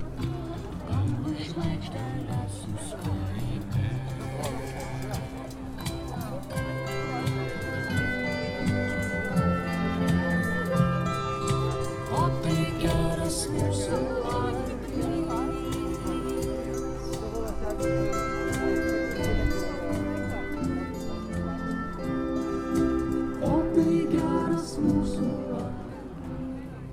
Gedimino pr., Vilnius, Lithuania - Walking through St Casimir FAir
Sounds of the St. Casimir Fair; as I start recording a group of young people start singing in protest at something I"m not quite sure of. they are dressed as dinosaurs. At one point they are met by a group of Hare Krishna's coming the other way and the sounds merge in and out. We end at the vell tower and the cathedral with street music and crowd noise.